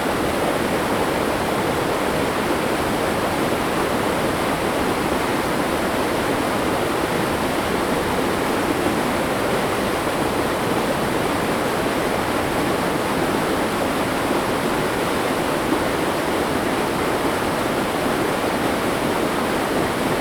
福興村, Ji'an Township - Farmland irrigation waterways
Farmland irrigation waterways, Streams of sound, Hot weather
Zoom H2n MS+XY